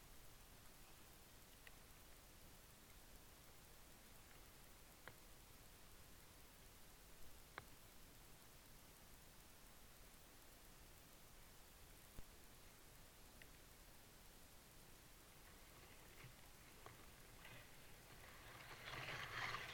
Back garden Rectory St, Halesworth, UK - Jacquemontii birch tree in gentle wind; contact mic recording
Spring was extremely late this year, but finally the leaves are out on this Himalayan Birch (Betula utilis) and are fluttering in the light breeze. The sound in the branches as picked-up by a piezo contact mic.
England, United Kingdom, 28 May 2021, ~17:00